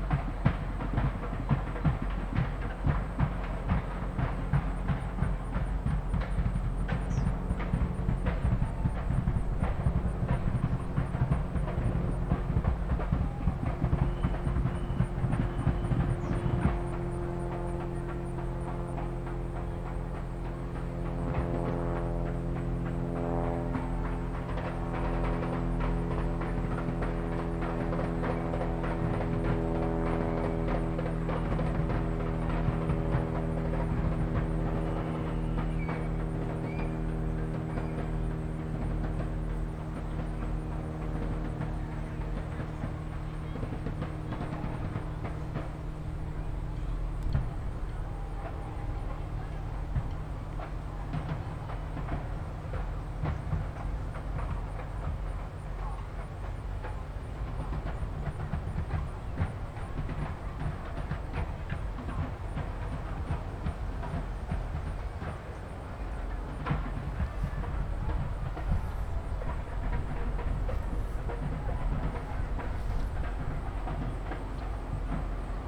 Washington Park, South Doctor Martin Luther King Junior Drive, Chicago, IL, USA - park sounds by pond with drums
Recorded after the Bud Biliken Day Parade, an African American festival in Chicago celebrating the start of the new school year.
10 August 2013, 15:00